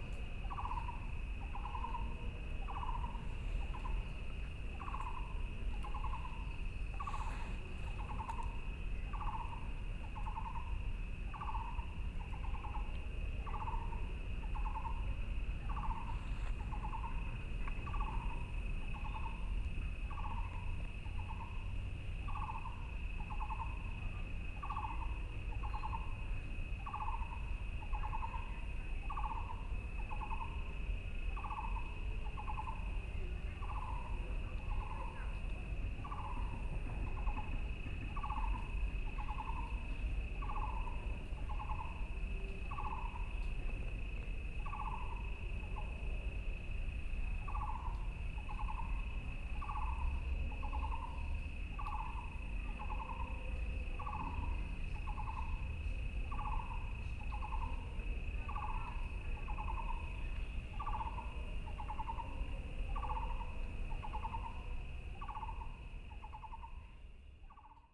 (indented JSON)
{
  "title": "BiHu Park, Taipei City - in the Park",
  "date": "2014-05-04 11:02:00",
  "description": "Frogs sound, Insects sound, Birdsong, Traffic Sound",
  "latitude": "25.08",
  "longitude": "121.58",
  "altitude": "20",
  "timezone": "Asia/Taipei"
}